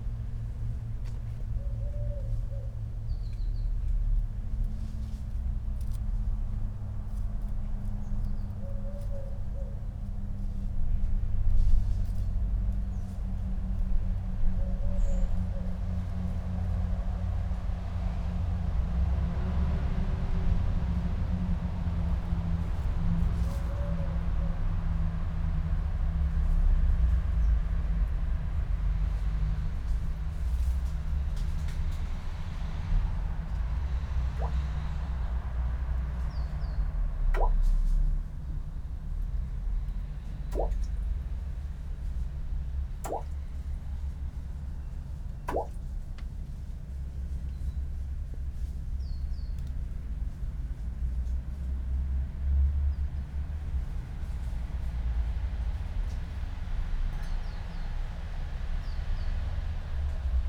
poems garden, Via Pasquale Besenghi, Trieste, Italy - abandoned well
small stones and dry leaves falling into forgotten well
7 September